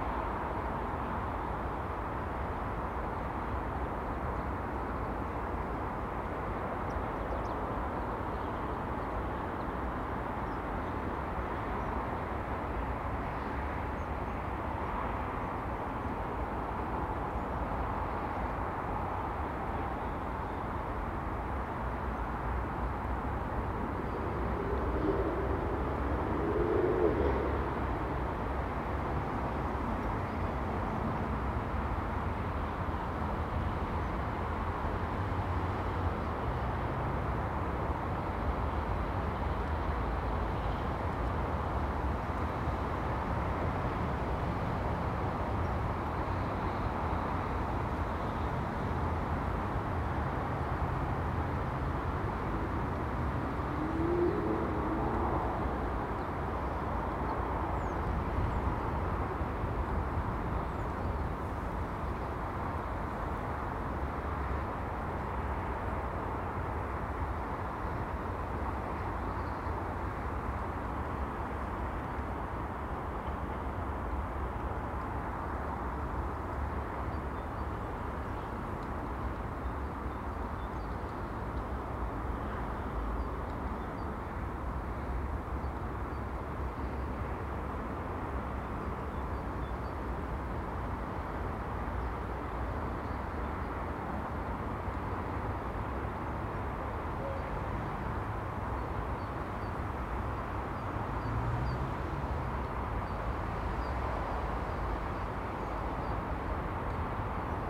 The Drive High Street Moorfield
In the warm wind
the snow is melting fast
A sense of release
from the cold
a first glimpse of spring